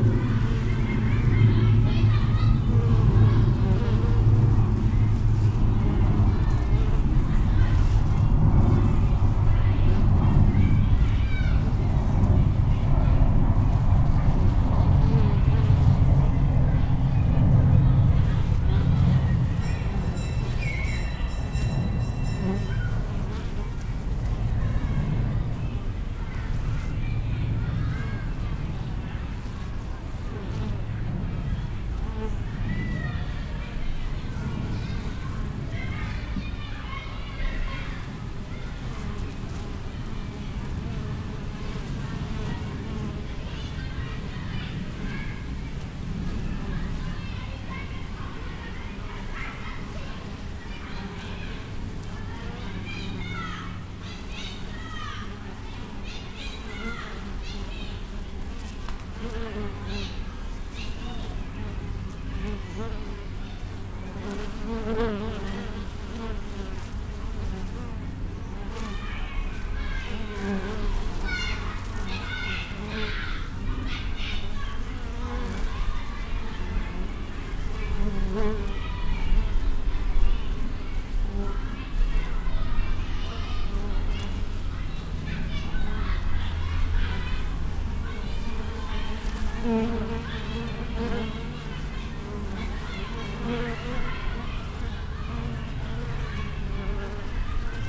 back yard, Cambria Rd, Loughborough Junction, London - hive, cambria road 18 JULY 2014
A hive of bees in the back yard at Cambria Rd. Bees, schoolyard, birds, planes. A hot day after morning storms. WLD 2014.
Roland R-9 with electret stereo omnis
18 July 2014, 13:08